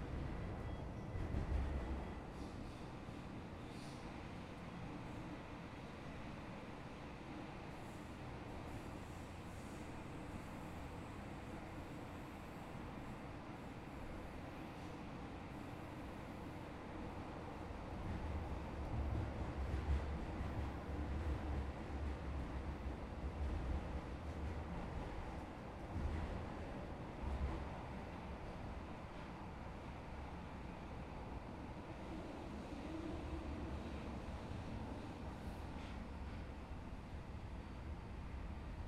Vila Madalena - R. Dr. Paulo Vieira, 010 - Sumarezinho, São Paulo - SP, 01257-010, Brasil - Metrô Vila Madalena
#SaoPaulo #SP #Metro #VilaMadalena #Vila #Madalena #Underground #Subway
São Paulo - SP, Brazil